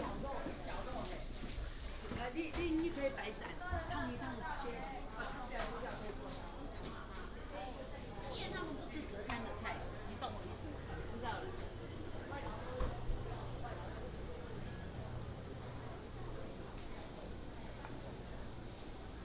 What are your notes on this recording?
Small market, alley, Walking in the traditional market